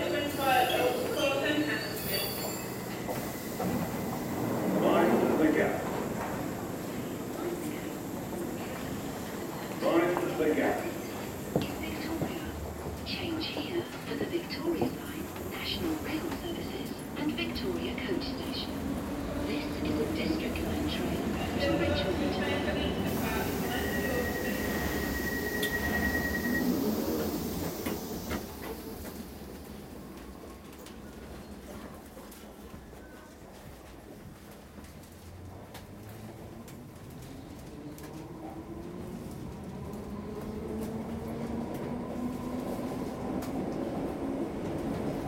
london, victoria station
recorded july 18, 2008.
London, Greater London, UK